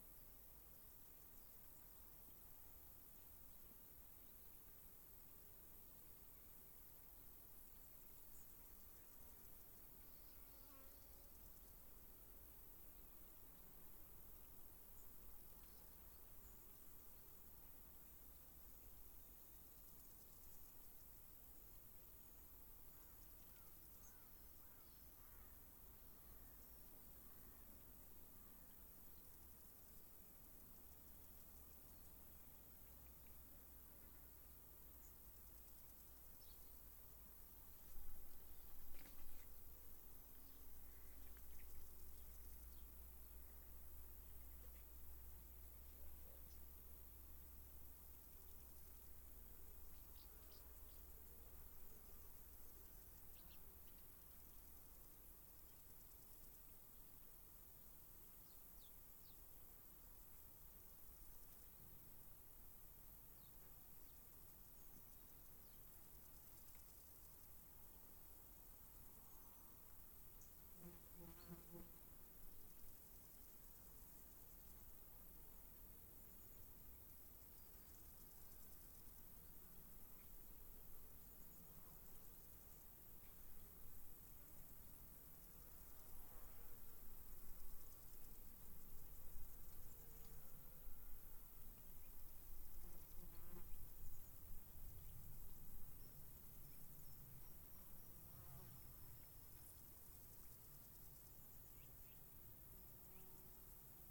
Le Cluzel Bas, Saint-Bonnet-le-Chastel, France - LE CLUZEL AU MATIN NATURE BIRDS
A quiet morning in le Cluzel, Auvergne, in august. MIX pre 6 ii HMBO 603 stereo cardioid
Auvergne-Rhône-Alpes, France métropolitaine, France, 15 August 2021, 08:37